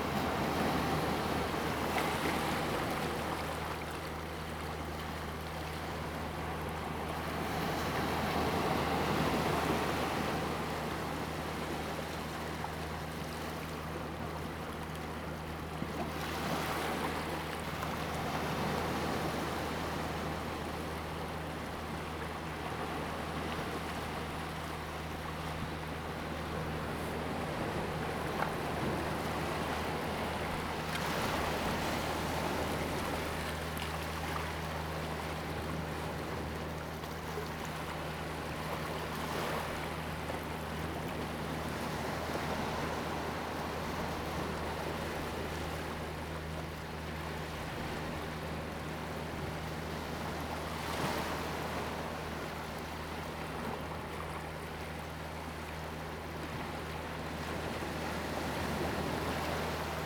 龍門村, Huxi Township - At the beach
At the beach, sound of the Waves, There are boats on the sea
Zoom H2n MS+XY